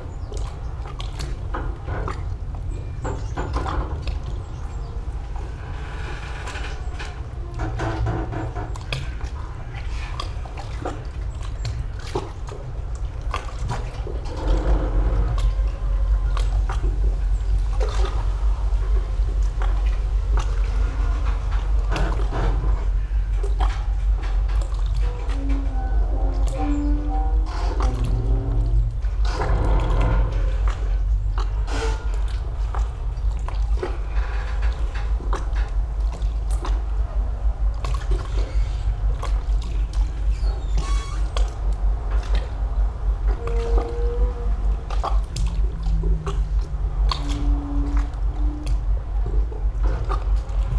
berlin: eichenstraße - the city, the country & me: squeaking boat
squeaking ship, waves lapping against the quay wall, passing motorboats
the city, the country & me: october 5, 2014